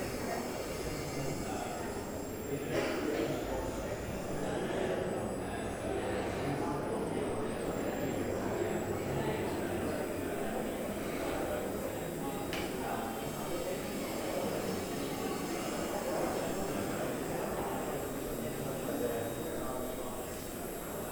{
  "title": "neoscenes: Artspace, TradeAir",
  "date": "2009-11-19 12:19:00",
  "description": "TradeAir installation opening by JAMES CHARLTON",
  "latitude": "-33.87",
  "longitude": "151.22",
  "altitude": "6",
  "timezone": "Australia/NSW"
}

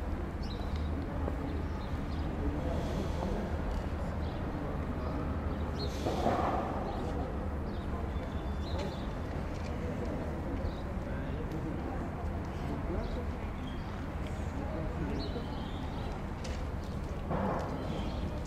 {
  "title": "marseille, place labadie",
  "description": "pendant le tournage de vieilles canailles",
  "latitude": "43.30",
  "longitude": "5.38",
  "altitude": "40",
  "timezone": "Europe/Berlin"
}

